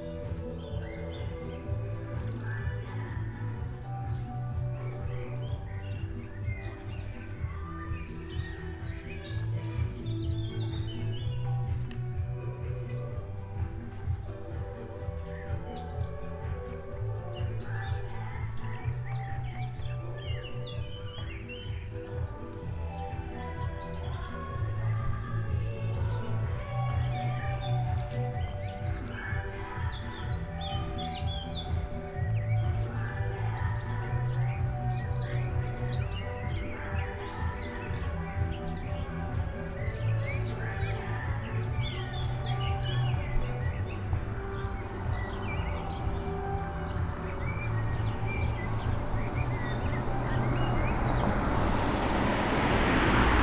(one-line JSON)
{"title": "Brandheide, Hamm, Germany - street mix Brandheide...", "date": "2020-04-24 19:15:00", "description": "over the past six weeks, since the beginning of the lockdown and social distancing regulations, \"Brandheide radio\" goes on air for 30 minutes form some speakers in one of the gardens. here we are listening to the special street mix from a little further down the road; the birds in some large old trees here seem to enjoy tuning in too...", "latitude": "51.68", "longitude": "7.88", "altitude": "64", "timezone": "Europe/Berlin"}